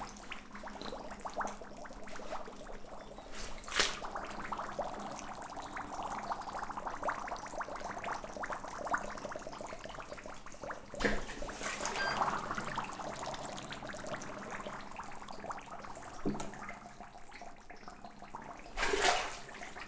Saint-Cierge-la-Serre, France - Big bubbles big problems
In an underground mine, I'm trying to explore, but I encounter problems. My feet makes bubbles. It's nothing else than methane gas, an explosive gas. Incomes are massive. Detector is becoming crazy and it's shouting alert. In fact, this is a dangerous place.